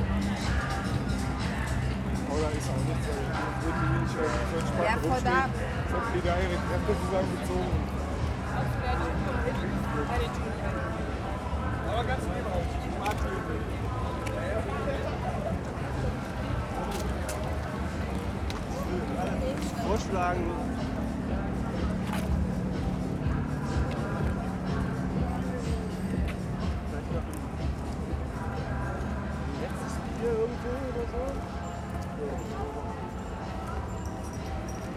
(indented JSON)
{
  "title": "berlin, oranienstraße: 1st may soundwalk (2) - the city, the country & me: 1st may soundwalk (2)",
  "date": "2011-05-01 23:28:00",
  "description": "1st may soundwalk with udo noll\nthe city, the country & me: may 1, 2011",
  "latitude": "52.50",
  "longitude": "13.43",
  "altitude": "39",
  "timezone": "Europe/Berlin"
}